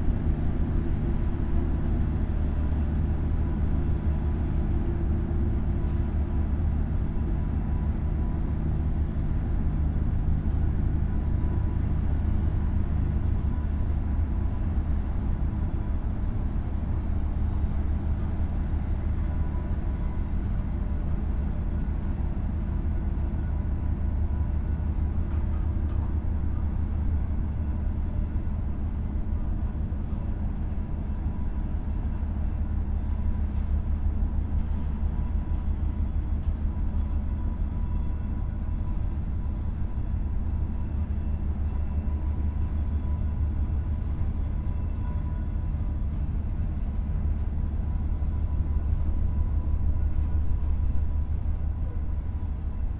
Le Mesnil-sous-Jumièges, France - Le Mesnil-sous-Jumièges ferry
The ferry crossing the Seine river, from Yville-Sur-Seine to Le Mesnil-sous-Jumièges. It's charging cars. Unfortunately, it's raining a lot.
17 September 2016, 19:00